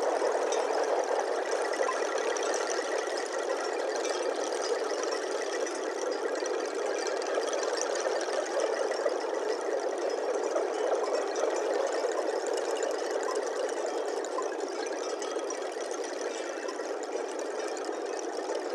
Harmonic Fields, Laby, Long Eks
Long straps tensioned and across the wind.
French artist and composer, Pierre Sauvageot (Lieux publics, France) created a a symphonic march for 500 aeolian instruments and moving audience on Birkrigg Common, near Ulverston, Cumbria from 3-5 June 2011. Produced by Lakes Alive
500 Aeolian instruments (after the Greek god, Aeolus, keeper of the wind) were installed for 3 days upon the common. The instruments were played and powered only by the wind, creating an enchanting musical soundscape which could be experienced as you rest or move amongst the instruments.
The installation used a mixture of conventional and purpose built instruments for example, metal and wood cellos, strings, flutes, Balinese scarecrows, sirens, gongs, harps and bamboo organs. They were organised into six sections, each named after different types of winds from around the world. The sounds that they created, and the tempo of the music, depended entirely upon the strength and the direction of the wind.